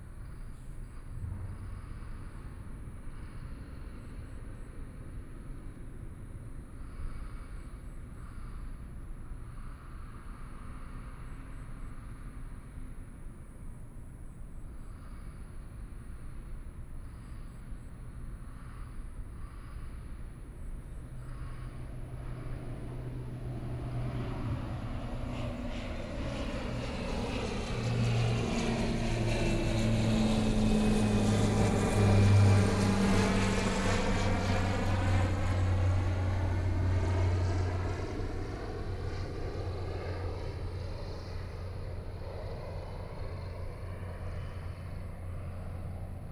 美崙海濱公園, Hualien City - Construction sounds harbor area
In the roadside park, Cicadas sound, Traffic Sound, Construction sounds harbor area
Binaural recordings